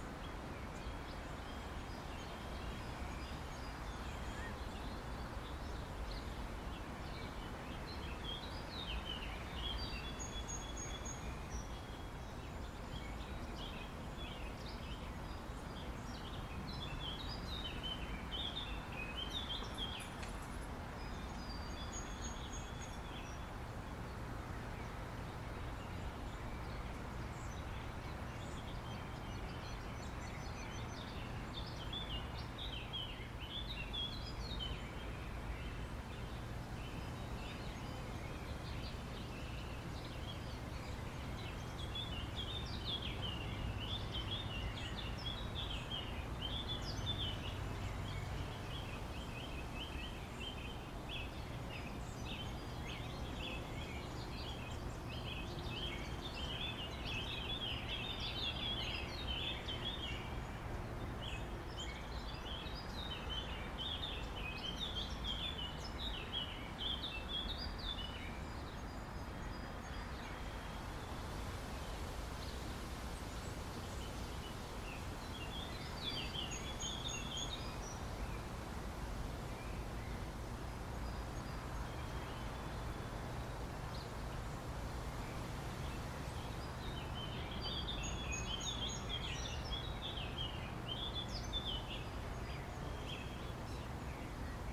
Victoria St, Kingston, ON, Canada - My Backyard 12:16pm
This is the sounds of my backyard :)
Pretty peaceful am I right